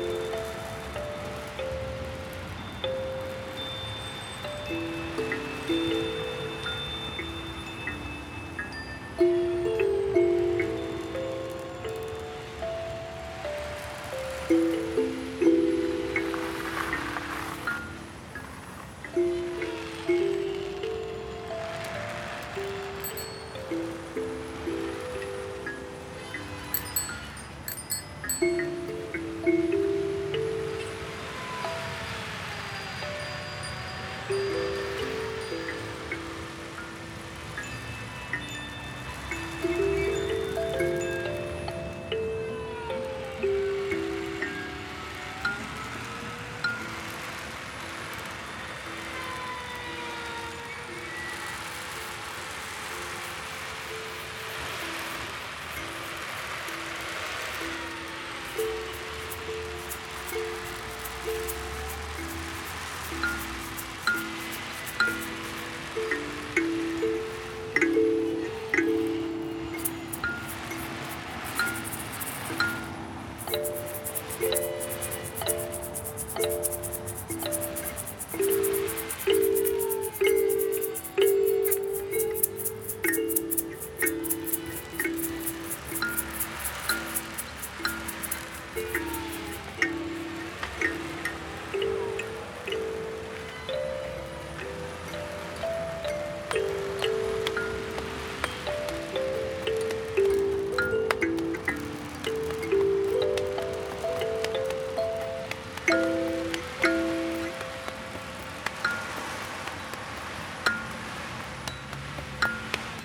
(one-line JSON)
{"title": "Fulda, Alemanha - Music Therapy soundscapes", "date": "2016-08-10 12:00:00", "description": "Recording of a soundscape improv in Schlossgarten (Fulda, DE) with Marzieh Ghavidel, Nazanin Jabbarian, Anton Preiger, Wolgang Meyberg and Ricardo Pimentel, during the Music Therapy seminar by Wolfgang Meyberg (International Summer University - Hochscule Fulda).", "latitude": "50.55", "longitude": "9.67", "altitude": "267", "timezone": "Europe/Berlin"}